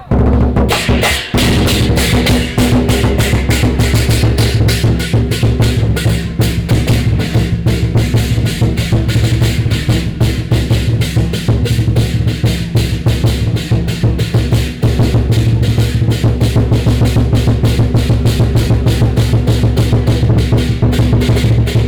No., Alley, Lane, Section, Zhongyang N. Rd., Beitou Dist., Taipei - Traditional temple ceremony
In front of the temple, Traditional temple activities, Percussion and performing rituals, Crowd cheers, Binaural recordings, Sony PCM D50 + Soundman OKM II